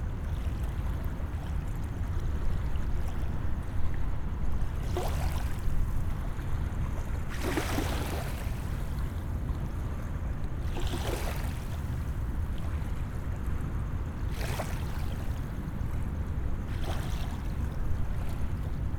Wake builds as tanker passes, New Orleans, Louisiana - Building Wake
CA-14(quasi binaural) > Tascam DR100 MK2